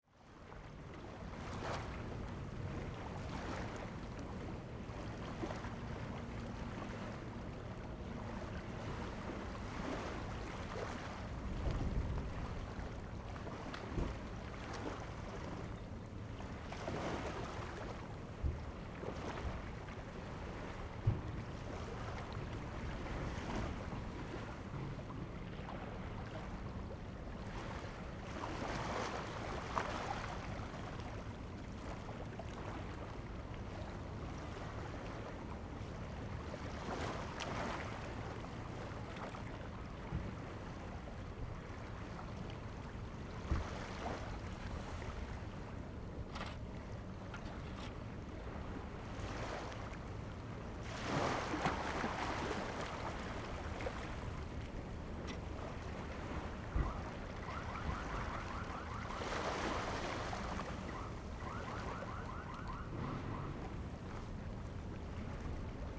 成功漁港, Chenggong Township - the waves
Standing on the quayside, Sound of the waves, The weather is very hot
Zoom H2n MS +XY